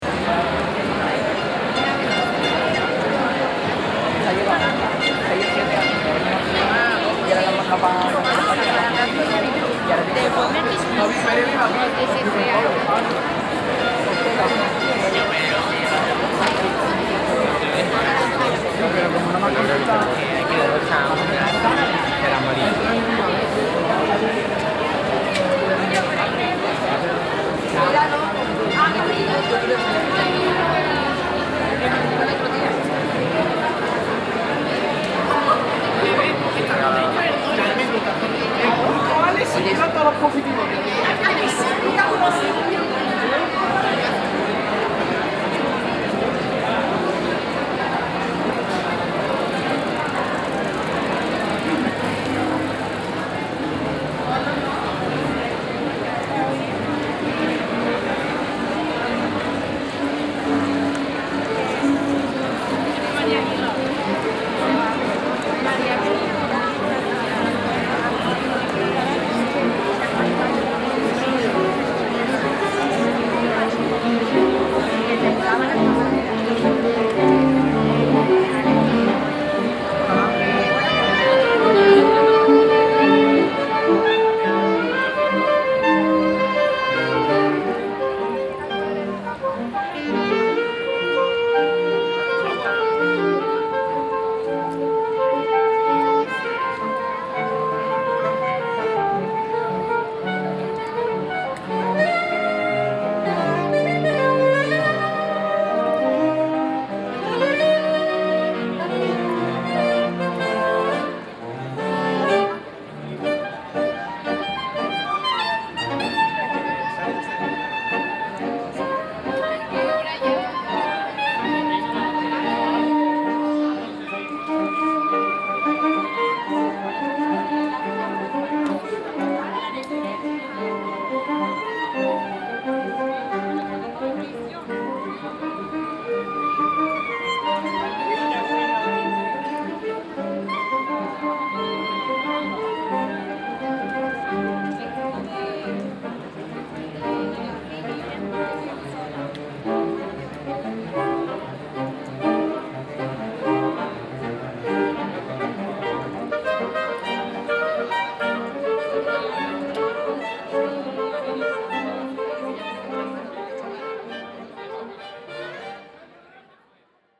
Sevilla, Provinz Sevilla, Spanien - Sevilla - Calle Velazquez - night shopping
At the Cale Velazquez in the evening. Thevsound of crowds of people strolling around and talking during night shopping.
international city sounds - topographic field recordings and social ambiences
Sevilla, Spain, 8 October 2016, 21:00